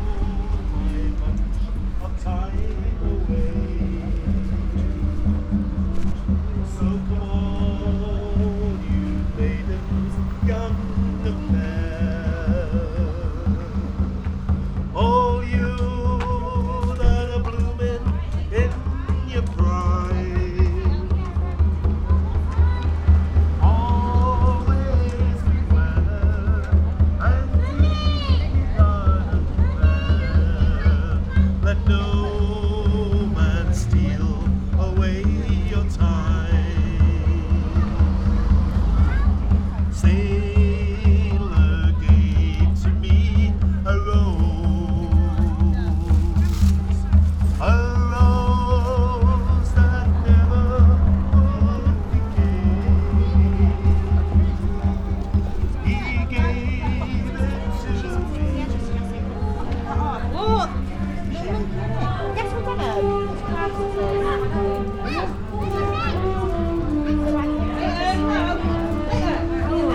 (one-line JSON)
{"title": "Buskers, Tewkesbury, Gloucestershire, UK - Buskers", "date": "2020-05-15 14:18:00", "description": "A singer and a sax player recorded while walking through the narrow shopping precinct in Tewkesbury town centre. 2 x Sennheiser MKH 8020s", "latitude": "52.00", "longitude": "-2.16", "altitude": "18", "timezone": "Europe/London"}